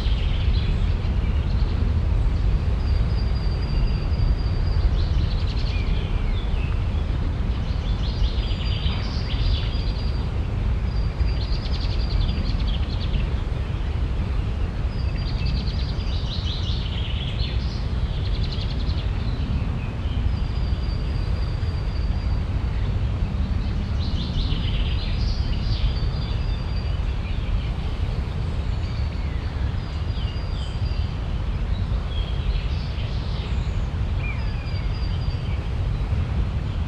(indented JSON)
{
  "title": "heiligenhaus, angerweg, im dickicht",
  "date": "2008-07-02 22:48:00",
  "description": "im dickicht zwischen gebüschen nahe der anger und bäumen, morgens - kreisender flughimmel - flugachse düsseldorf flughafen\nproject: :resonanzen - neanderland - soundmap nrw: social ambiences/ listen to the people - in & outdoor nearfield recordings, listen to the people",
  "latitude": "51.30",
  "longitude": "6.96",
  "altitude": "128",
  "timezone": "Europe/Berlin"
}